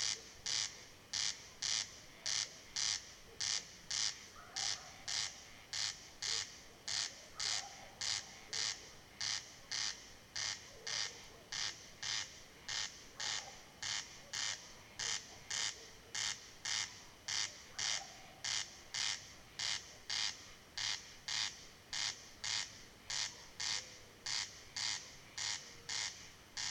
field nearby lake Luodis, Lithuania - the endless corn crake
a lone corn crake (crex crex) marks his territory
Utenos apskritis, Lietuva, 20 June 2013, 22:45